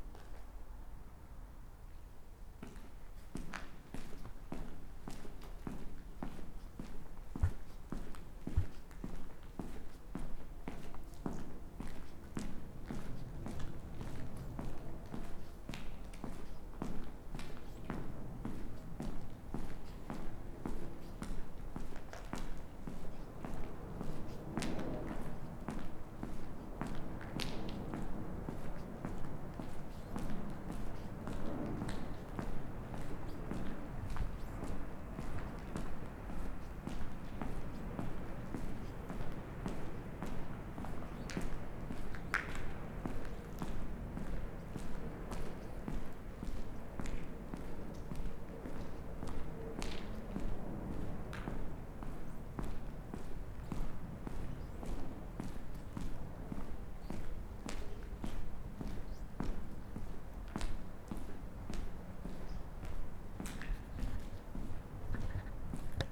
Sentier de la Station, Charleroi, België - Roux Railway Tunnel
Walking through the creepy railway tunnel between Roux and the Canal